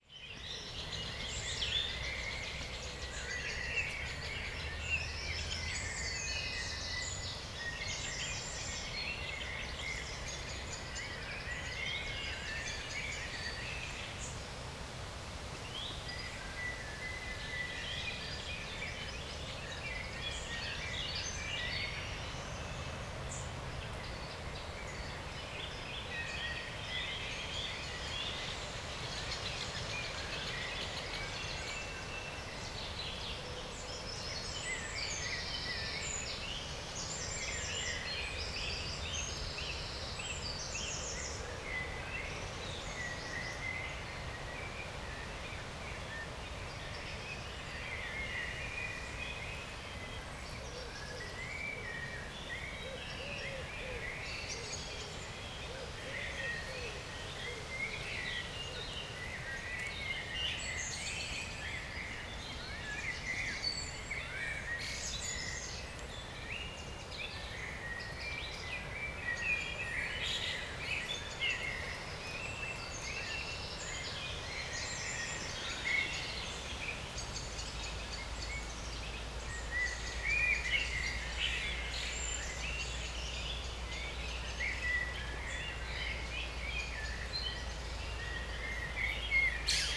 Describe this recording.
pond in forest, place revisited on a warm spring evening, (Sony PCM D50, DPA4060)